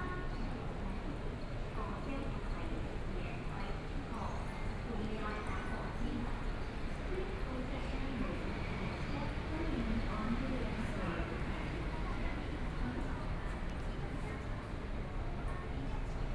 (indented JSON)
{
  "title": "Gangqian Station, Taipei - MRT Station",
  "date": "2014-03-15 17:57:00",
  "description": "in the Mrt Station, Traffic Sound\nBinaural recordings",
  "latitude": "25.08",
  "longitude": "121.58",
  "timezone": "Asia/Taipei"
}